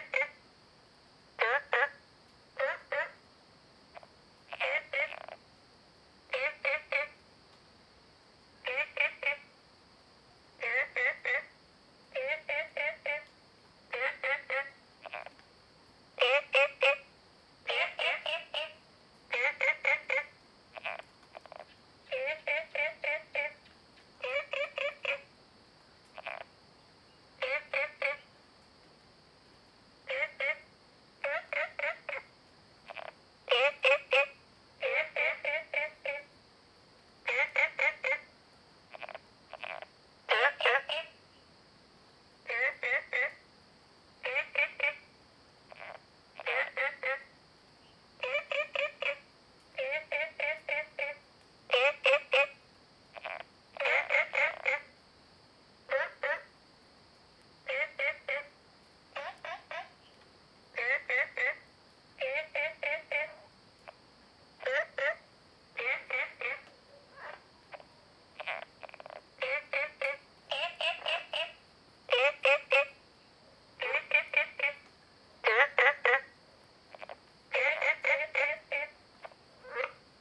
TaoMi 綠屋民宿, Nantou County - Small ecological pool
Frogs chirping, Ecological pool
Zoom H2n MS+XY